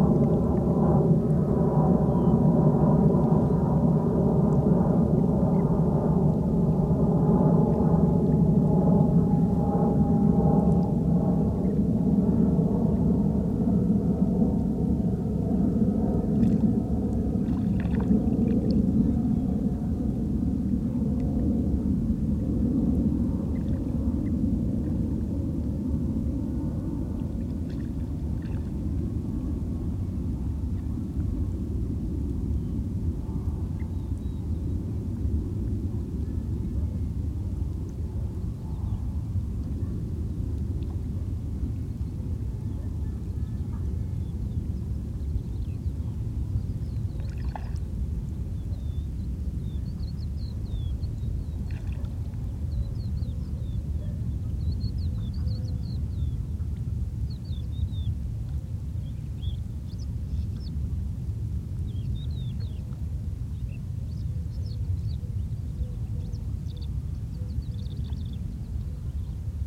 Praia do Barril, Portugal - Praia do Barril beach
Praia do Barril is a long beach island. This recording was made on the side facing land, there are no waves and as a result it's quieter than the other side. You can hear birds and small fish splashing in the water near the shore. As it is also close to Faro airport you can hear an airplane at the beginning and end of the recording.
Recorder - Zoom H4N. Microphones - pair of Uši Pro by LOM